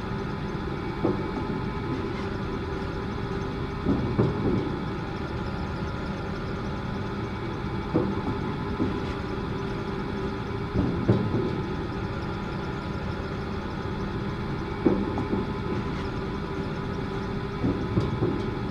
Riffa, Bahreïn - Oil extraction - Barhain
Désert du Bahrain - sur la route du "Three of Life"
Extraction sur le champ pétrolifère.
المحافظة الجنوبية, البحرين